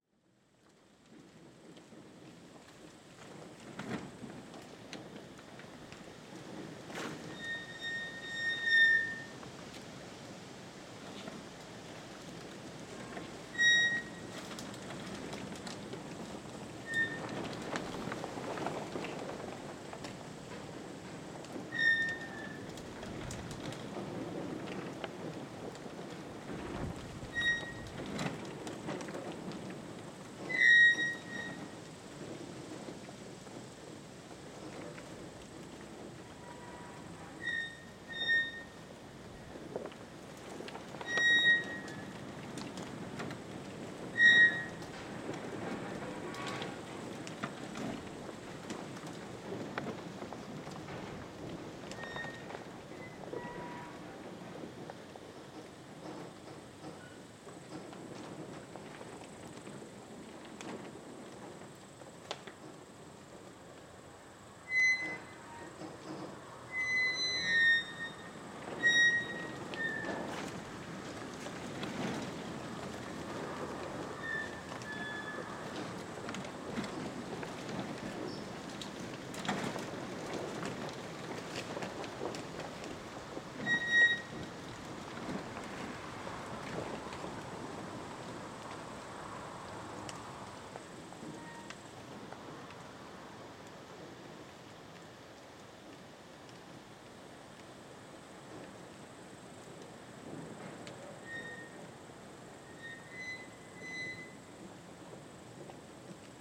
Užpaliai, Lithuania, inside of old farm
Grand wind play inside of old farm building